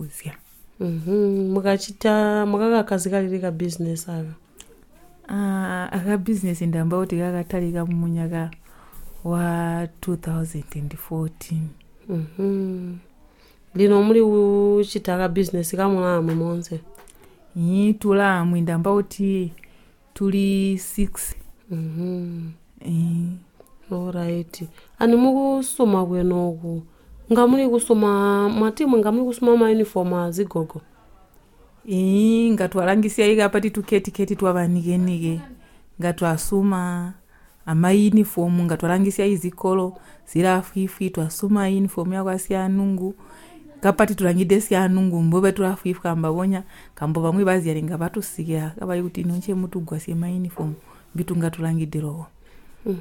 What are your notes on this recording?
Eunice Mwinde interviews a member of a VSnL group in Chinonge Ward (VSnL = Village Saving and Lending). They speak in the local language, ChiTonga. The woman describes the formation of a group of 6 women in 2014. The group entertains a collective project of sewing uniforms and then also included sewing African attire from fashion fabrics. Eunice asks her to describe how they share their work in the group and the benefits the women got from their business. Eunice enquires about the training they received via Zubo workshops to built up their business. The woman describes. a recording from the radio project "Women documenting women stories" with Zubo Trust. Zubo Trust is a women’s organization in Binga Zimbabwe bringing women together for self-empowerment.